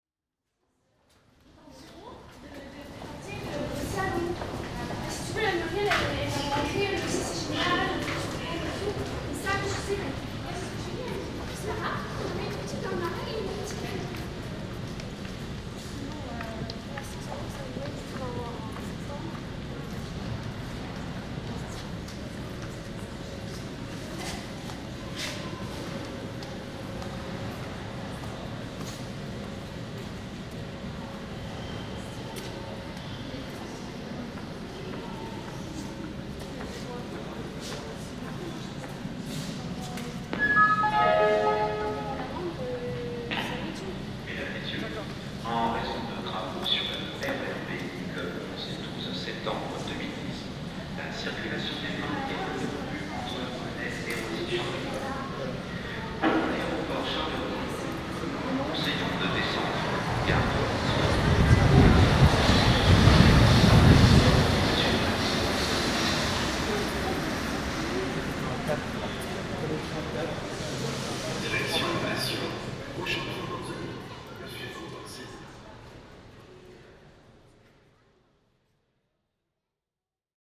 Entering metro startion St. Paul, Paris (A bit windy at the end). Binaural recording.